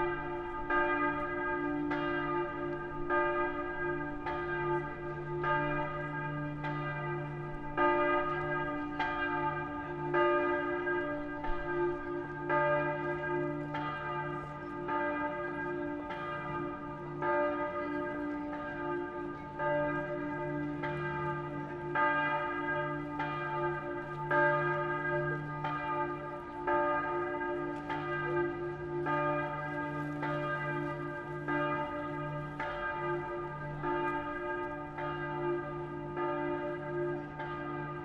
munich, 2008, marianplatz bells, invisisci